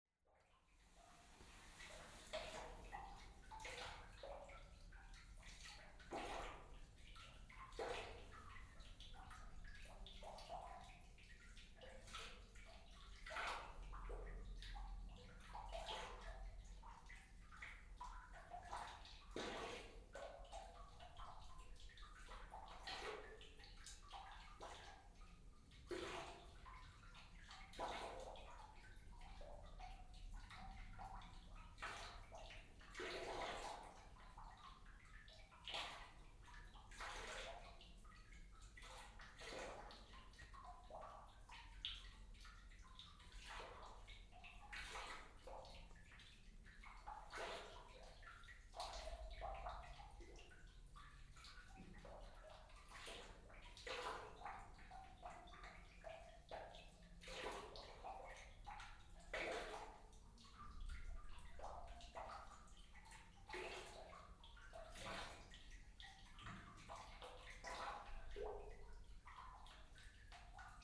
Meyersche Stollen, Aarau, Schweiz - Water in Meyersche Stollen
First recording of the water in the Meyersche Stollen, binaural.